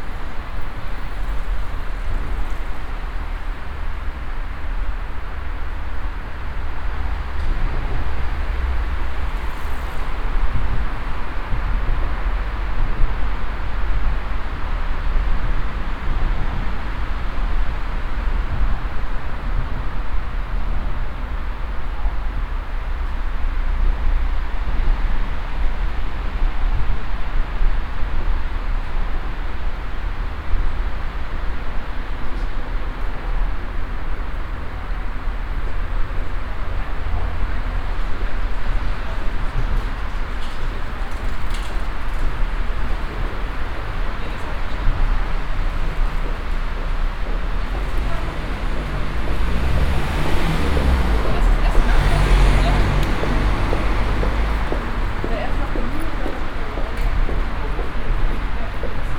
cologne, im sionstal, under bridge
soundmap nrw: social ambiences/ listen to the people in & outdoor topographic field recordings
25 June 2009, ~14:00